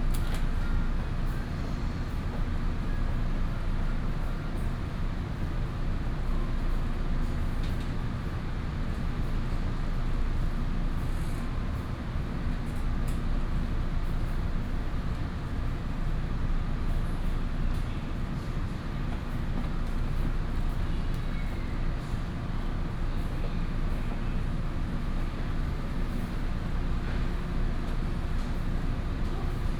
Tamsui Station, New Taipei City - In MRT station platform
In MRT station platform, In MRT compartment